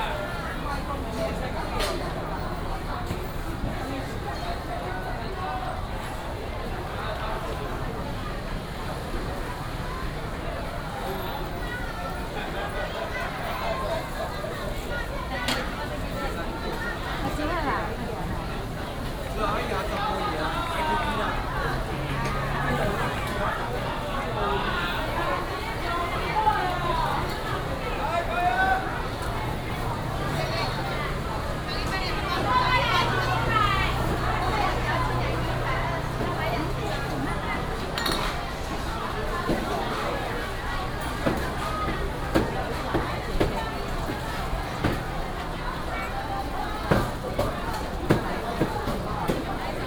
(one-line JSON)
{"title": "鳳山工協市場, Kaohsiung City - Walking in the traditional market", "date": "2018-03-30 09:43:00", "description": "Walking in the traditional market", "latitude": "22.63", "longitude": "120.37", "altitude": "18", "timezone": "Asia/Taipei"}